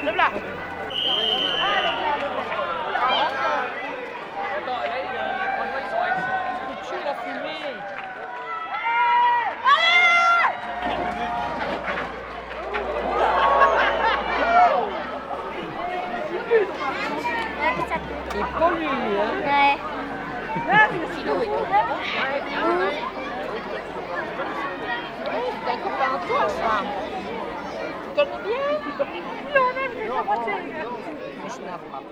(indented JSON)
{
  "title": "Mont-Saint-Guibert, Belgique - Soapbox race",
  "date": "2015-09-13 15:00:00",
  "description": "A soapbox race in Mont-St-Guibert. A very strange gravity racer is driving. There's green smoke everywhere behind him.",
  "latitude": "50.64",
  "longitude": "4.61",
  "altitude": "89",
  "timezone": "Europe/Brussels"
}